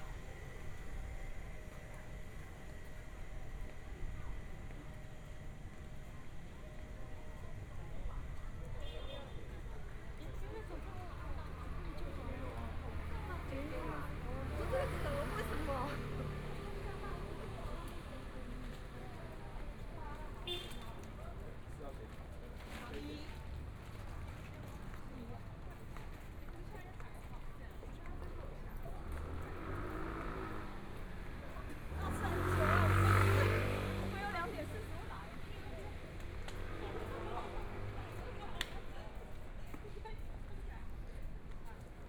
台北市中山區民安里 - Walking across the different streets
Walking across the different streets, Environmental sounds, Motorcycle sound, Traffic Sound, Walking through a variety of different kinds of shops, Binaural recordings, Zoom H4n+ Soundman OKM II
Taipei City, Taiwan, 6 February 2014, ~14:00